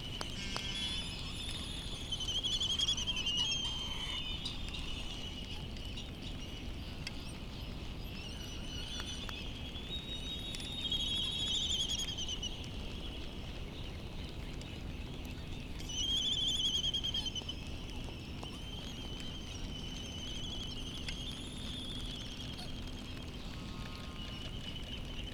United States Minor Outlying Islands - Midway Atoll soundscape ...
Midway Atoll soundscape ... Sand Island ... birds calls from laysan albatross ... bonin petrel ... white tern ... distant black-footed albatross ... and cricket ... open lavalier mics on mini tripod ... background noise and some wind blast ... petrels calling so still not yet light ...
15 March 2012